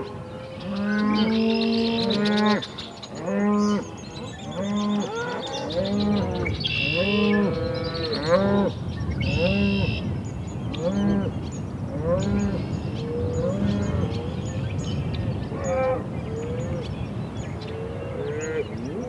Nebraska, USA - End of the afternoon in a field, in Nebraska
Cows and bulls calling and mooing, bird singing, the end of the afternoon in the countryside... Recorded around a pound in the countryside of Nebraska (USA), at the end of the day. Sound recorded by a MS setup Schoeps CCM41+CCM8 Sound Devices 788T recorder with CL8 MS is encoded in STEREO Left-Right recorded in may 2013 in Nebraska, USA.
19 May 2013, 18:00